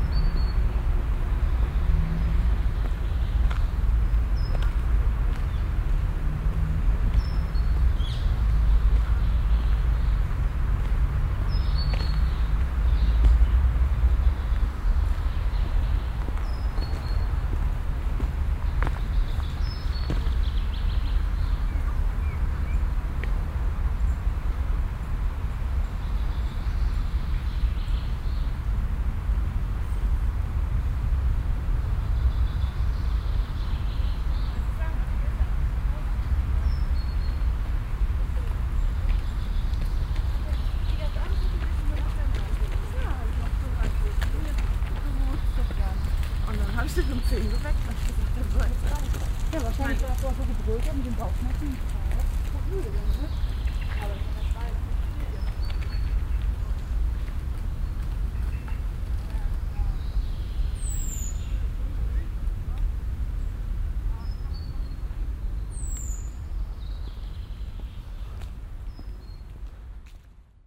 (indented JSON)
{
  "title": "cologne, friedenspark, gehweg, morgens - cologne, roemerpark, gehweg, morgens",
  "date": "2008-05-29 22:37:00",
  "description": "soundmap: köln/ nrw\nfriedenspark morgens, gehweg sued\nproject: social ambiences/ listen to the people - in & outdoor nearfield recordings",
  "latitude": "50.92",
  "longitude": "6.97",
  "altitude": "52",
  "timezone": "Europe/Berlin"
}